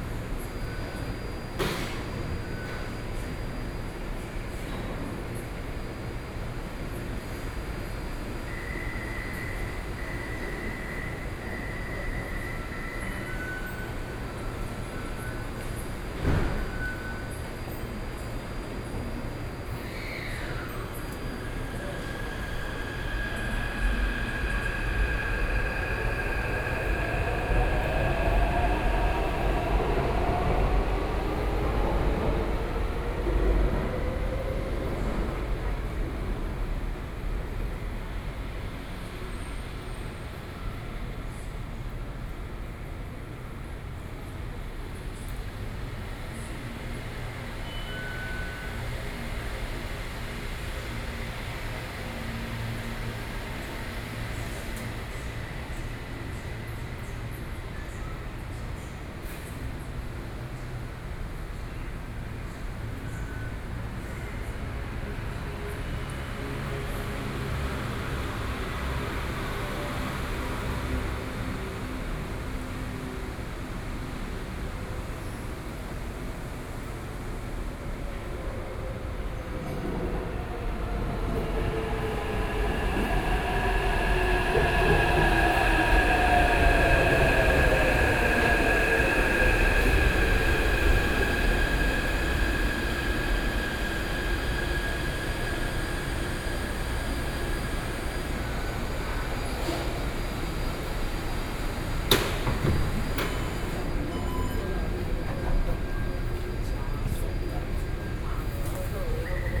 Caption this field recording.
MRT station, On the platform waiting, (Sound and Taiwan -Taiwan SoundMap project/SoundMap20121129-13), Binaural recordings, Sony PCM D50 + Soundman OKM II